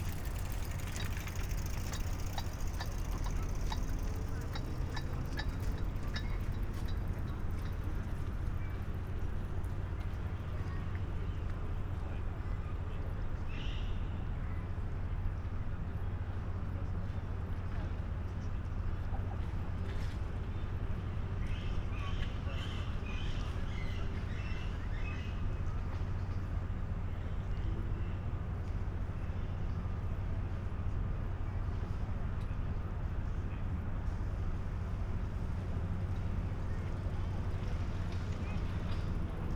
Mülheim, Cologne, Germany - pedestrian bridge, evening ambience, parakeets
Köln, Mülheim, pedestrian bridge at the harbour, parakeets (Psittacula krameri) in the trees, quite common in Cologne. Pedestrians, bikers, a ship passing by
(Sony PCM D50, Primo EM172)
30 August 2016, 20:05